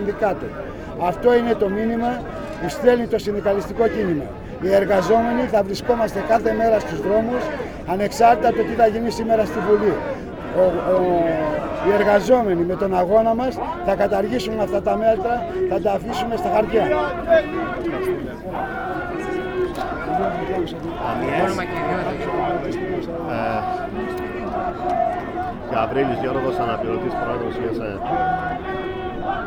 Athens. Interview with trade unionists - 06.05.2010
Ilias Vrettakos, assistant chairman, ADEDY.
Jiorgos Gabriilidis, assistent chairman, GESAE.
Ελλάδα, European Union, 11 May 2010, ~13:00